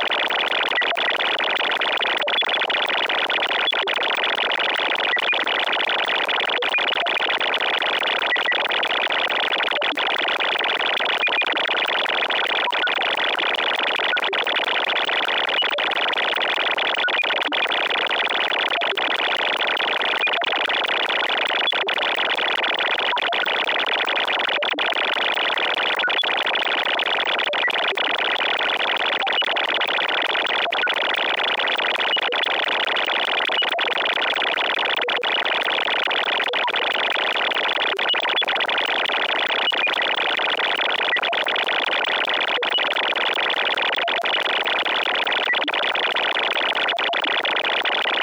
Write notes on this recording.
Electromagnetic field song of a Automated teller machine, recorded with a telephone pickup coil.